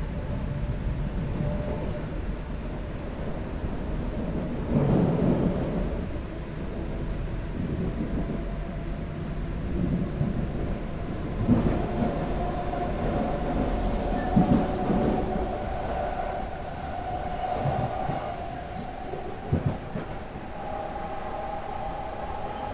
Houilles, France - Train in Houilles station
The train in the Houilles station, going to Paris Saint-Lazare.